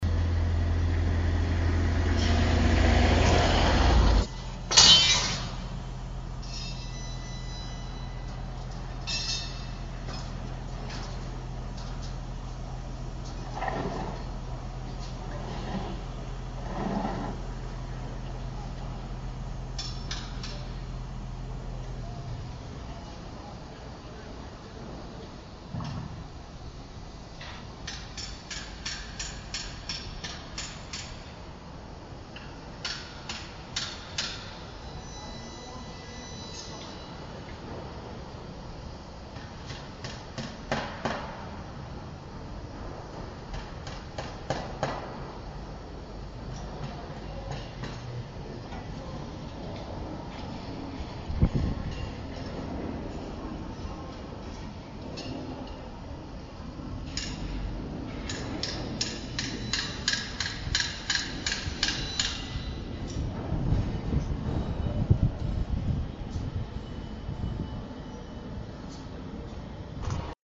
Baustelle an der Friedrichstraße
Der ewige Sound des Aufschwungs.